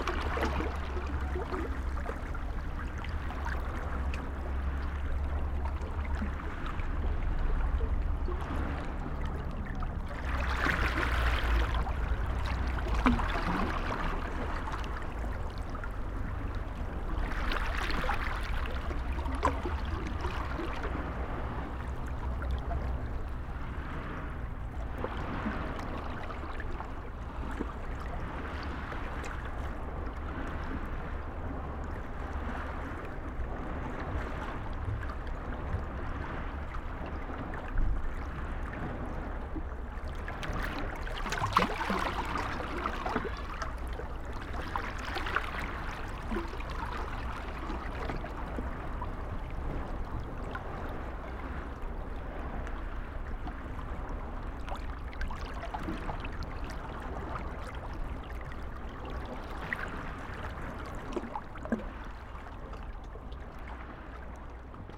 Mediterranean Sea, Saintes-Maries-de-la-Mer, Frankreich - Waves on the breakwater
Mediterranean sea, lapping on the shore on a calm evening. from the shore people and cars can be heard. Binaural recording. Artificial head microphone set up on some rocks on a breakwater, about 2 meters away from the waterline. Microphone facing north east. Recorded with a Sound Devices 702 field recorder and a modified Crown - SASS setup incorporating two Sennheiser mkh 20 microphones.
France métropolitaine, France, 18 October 2021, ~19:00